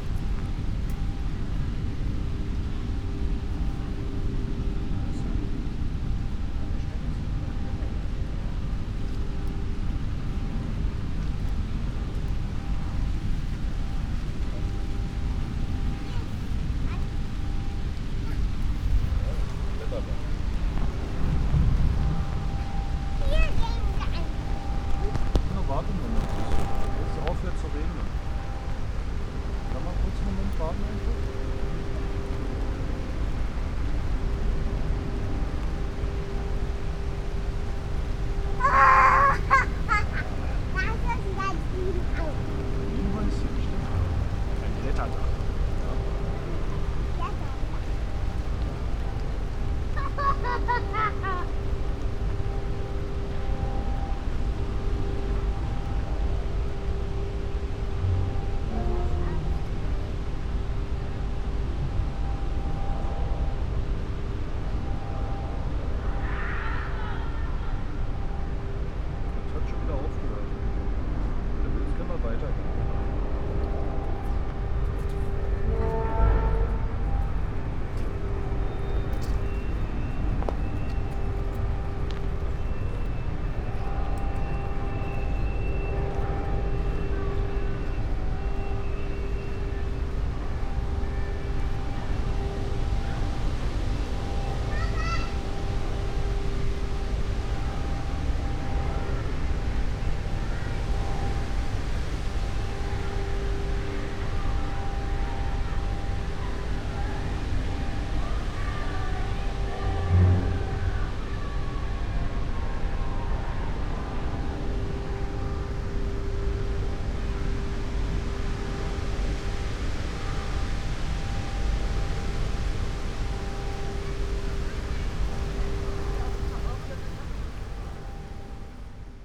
Plänterwald, Berlin, Germany - standing still, rain
forest path, few raindrops, standing under high bush, little girl of great laughter with tiny basket in her hand and her father join, sounds of cement factory, turning wheel, screams of people, winds
Sonopoetic paths Berlin